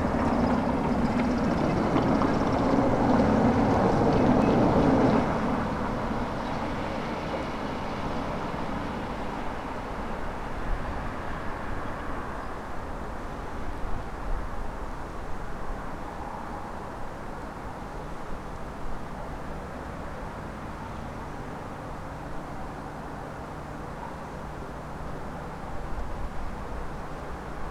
Lithuania, Kedainiai, Old Market Place
autos on stone pavement